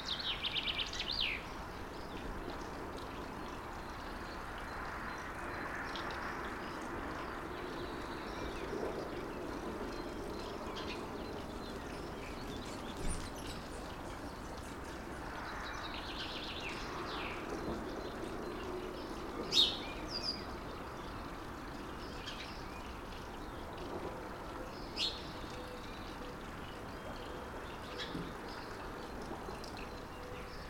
Balkon, Mittergasse, Aich, Österreich - Balkonaufnahme morgens
Ortsrandlage, Vögel, Wasser, Straße: B320, Solar-Wühltiervertreiber, H1n Zoom Handy Recorder, XY